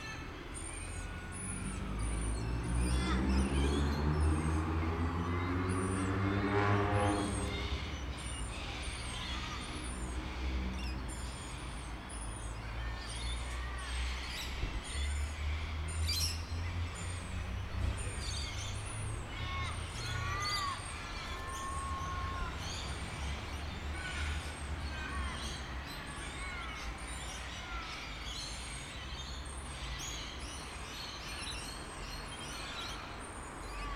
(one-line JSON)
{"title": "Pitt St, Waterloo NSW, Australia - Crows and pigeons", "date": "2020-07-10 20:00:00", "description": "Crows and pigeons feeding and flocking around the Waterloo Housing Estate, recorded with a Zoom pro mic.", "latitude": "-33.90", "longitude": "151.20", "altitude": "44", "timezone": "Australia/Sydney"}